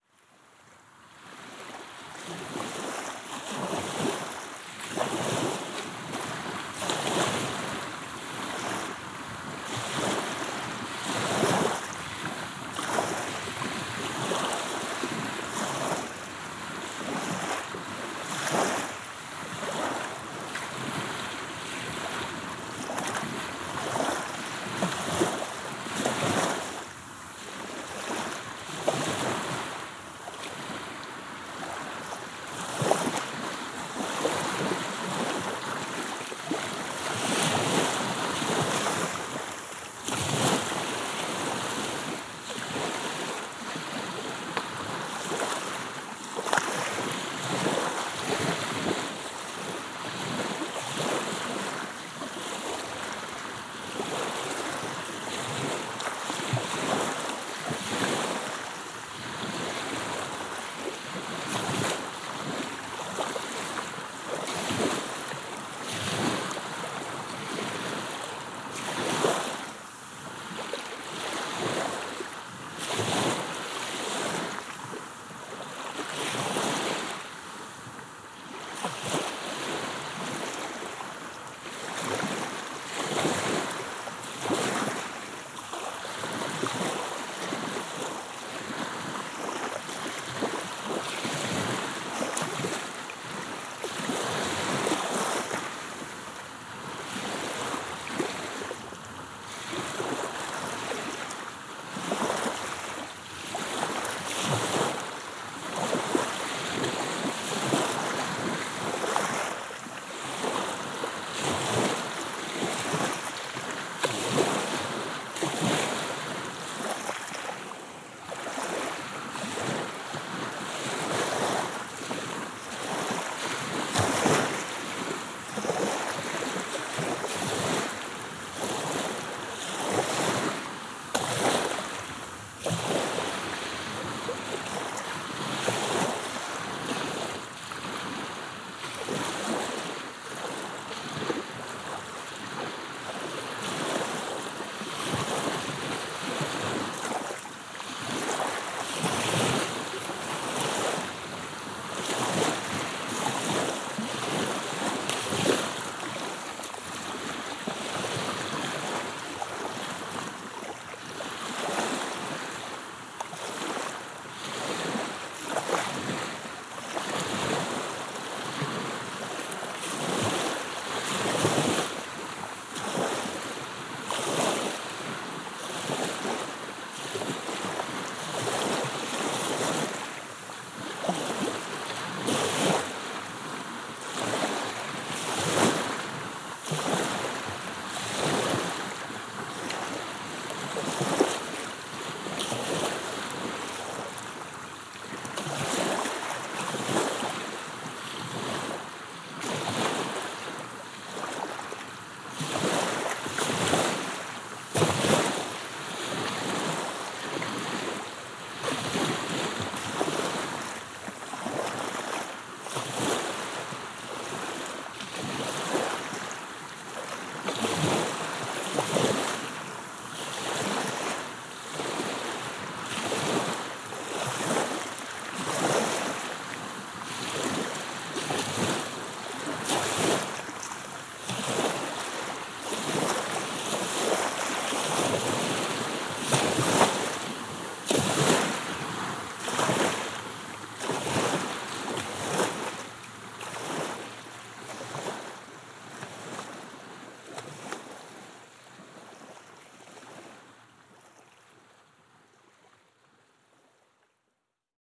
Beach Pokrovka, Obwód mikołajowski, Ukraina - Calm Black Sea - binaural
Black Sea, Pokrovka beach
I am sitting at the seashore, which is calm
Binaural recordins, Olympus LS-100 plus binaural microphones Roland CS-10EM
Suavas Lewy